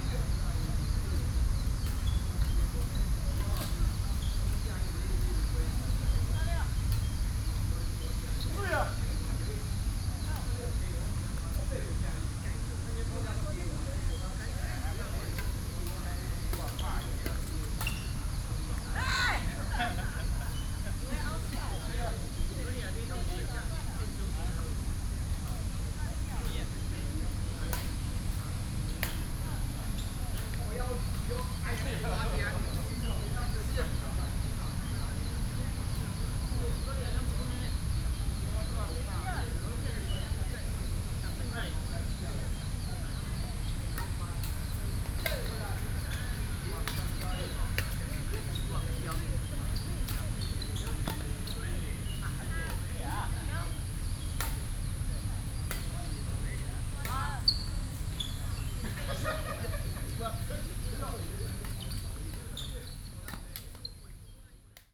Perfection park, Taipei - Playing badminton
Playing badminton, Sony PCM D50 + Soundman OKM II
信義區, 台北市 (Taipei City), 中華民國, 23 June 2012